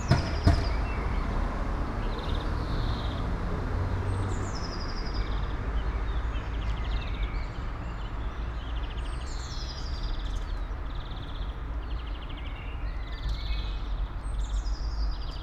all the mornings of the ... - apr 20 2013 sat
Maribor, Slovenia, 20 April 2013, 08:22